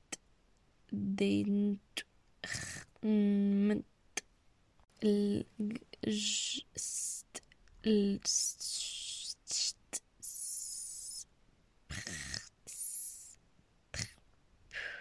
carrer dhostal den sol - Discussion Sonore
El cuestionamiento y el secuestro del lenguaje son los temas que se abordan a través de la instalación de esta Deads Drops de sonido. Entre la ciudad de Rennes y Barcelona los archivos sonoros contenidos en estas Dead Drops constituyen un medio de comunicación mediante el uso de un lenguaje abstracto, incluso de un nuevo lenguaje, como Isidore Isou en su obra «tratado de valiente y de eternidad» O Guy Debord explorando el secuestro cerca de los letristas.
En la dead drop se encuentra la elocución de las consonantes de la descripción del proyecto. Esto lleva a una discusión entre las dos ciudades mediante un diálogo de puesta en abismo a la sonoridad absurda que apela a la noción de repetición y de absurdo.
Catalunya, España, 22 April 2021